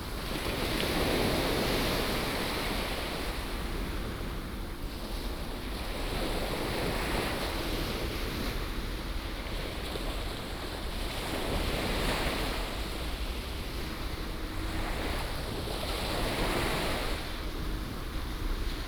Tamsui District, New Taipei City, Taiwan - Sound of the waves
On the beach, Sound of the waves